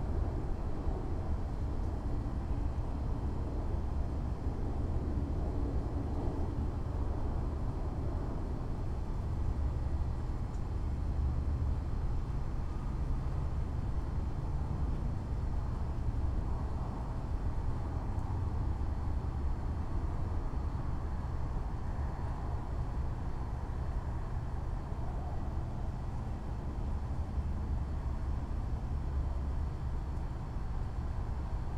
Gunter St, Austin, TX, USA - Shelter in Place
Recorded with Sound Devices 633 and Lom USIs
24 March 2020, 23:30